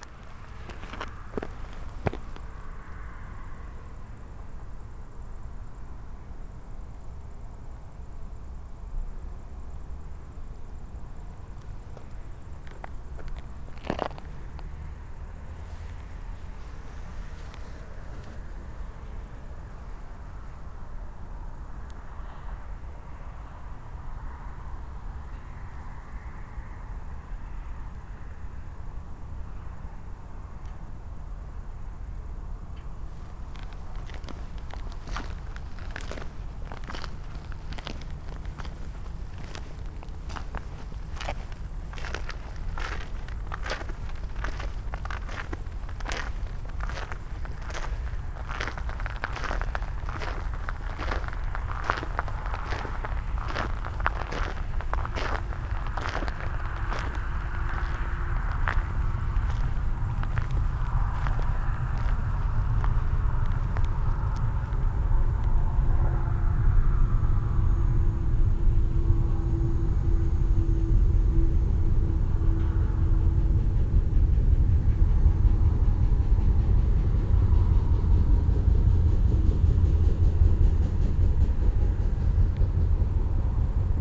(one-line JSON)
{"title": "Kerpen-Buir, Deutschland - walk on A4 motorway", "date": "2013-08-27 20:20:00", "description": "short walk on the new A4 motorway, which will be shifted south soon because of the extension of the Hambach lignite opencast mine. a heavy duty train is passing nearby, behind an earth wall. the coal train line seems already functional.\n(Sony PCM D50, DPA4060)", "latitude": "50.87", "longitude": "6.59", "altitude": "98", "timezone": "Europe/Berlin"}